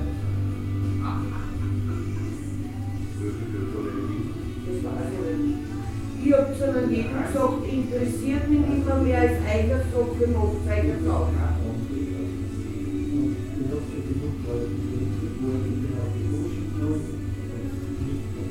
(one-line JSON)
{"title": "wien x - steirerhof", "date": "2015-02-15 21:20:00", "description": "steirerhof, senefeldergasse 25, 1100 wien", "latitude": "48.17", "longitude": "16.38", "altitude": "216", "timezone": "Europe/Vienna"}